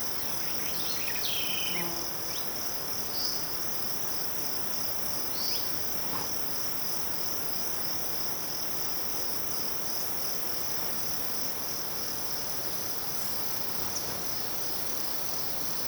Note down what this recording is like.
Close to the small village of Serinha, during the day, some insects, a few birds, the river far away in background and sometimes light voices (far away). Recorded with a MS Schoeps in a CINELA Windscreen, Sound Ref: BR-180310T03, GPS: -22.388273, -44.552840